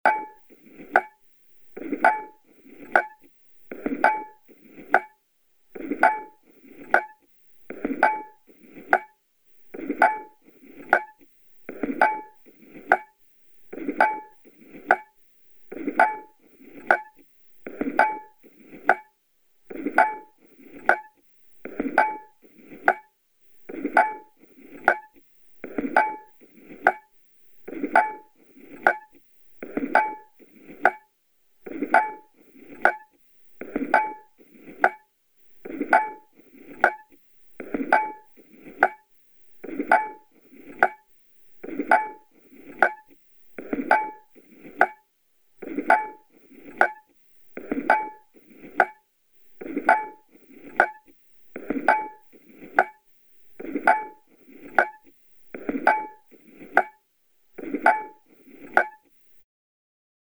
Sarajevo, Old town, Clockwork tower - Heartbeats of Sarajevo
Just being inside the clockwork tower in order to record the sound of the clockwork mechanism was a big honor for me. I recorded the sound with my TASCAM DR 1 and I used contact microphone.